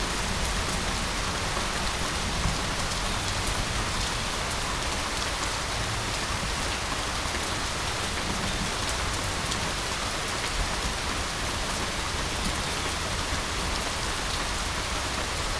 {
  "title": "Paulding Ave, Northvale, NJ, USA - Late Morning Rainstorm",
  "date": "2022-08-22 11:15:00",
  "description": "A much-needed rainstorm, as captured from a covered bench right outside the front door. There was a severe drought at the time of this recording, with hot summer weather and almost no rain throughout the duration of my approximately 15-day stay.\n[Tascam Dr-100mkiii uni mics]",
  "latitude": "41.02",
  "longitude": "-73.95",
  "altitude": "49",
  "timezone": "America/New_York"
}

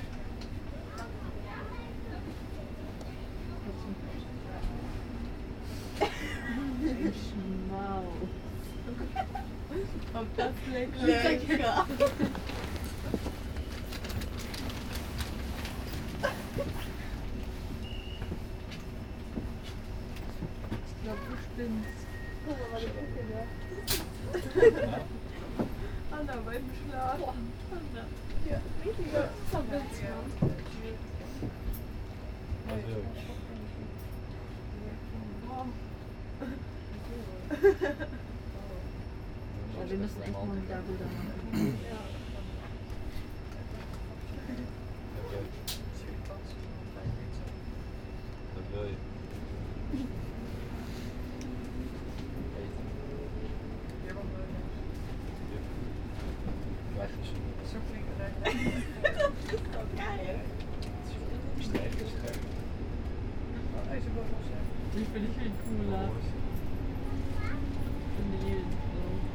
{
  "title": "Amsterdam, Nederlands - Amsterdam station and train to Zandvoort",
  "date": "2019-03-28 17:38:00",
  "description": "A long ride. At the beginning, endless ballet of passing trains in the Amsterdam Central station, and after, a travel into the Zandvoort-Aan-Zee train, stopping in Haarlem. The end of the recording is in the Zandvoort village, near the sea.",
  "latitude": "52.38",
  "longitude": "4.90",
  "altitude": "6",
  "timezone": "Europe/Amsterdam"
}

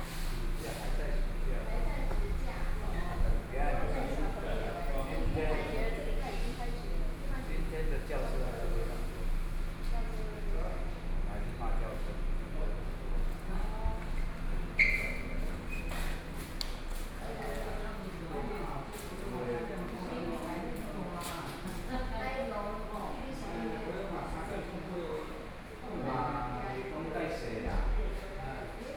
{
  "title": "Fuli Station, Fuli Township - In the station lobby",
  "date": "2014-09-07 14:24:00",
  "description": "In the station lobby, small station",
  "latitude": "23.18",
  "longitude": "121.25",
  "altitude": "224",
  "timezone": "Asia/Taipei"
}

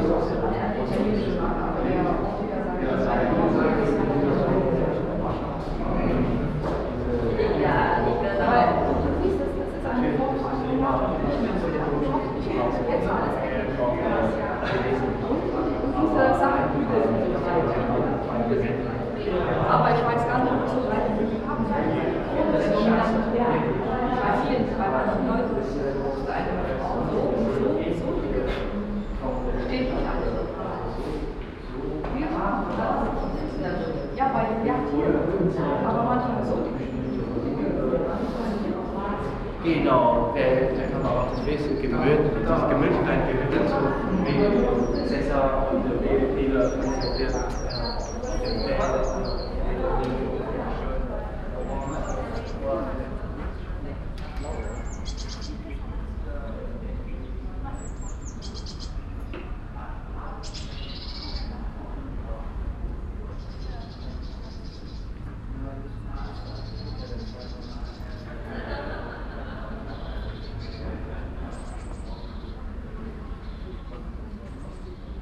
{
  "title": "ratingen, grabenstrasse, stadtmuseum",
  "description": "vernissagepublikum an einem sonntag morgen\n- soundmap nrw\nproject: social ambiences/ listen to the people - in & outdoor nearfield recordings",
  "latitude": "51.30",
  "longitude": "6.85",
  "altitude": "59",
  "timezone": "GMT+1"
}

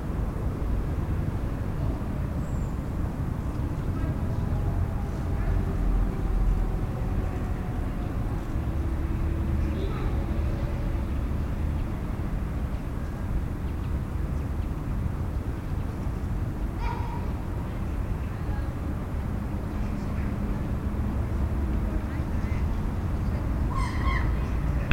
{
  "title": "Spartastraat, Zoetermeer",
  "date": "2010-10-13 16:58:00",
  "description": "Children playing in the distance, during soundwalk",
  "latitude": "52.06",
  "longitude": "4.50",
  "timezone": "Europe/Amsterdam"
}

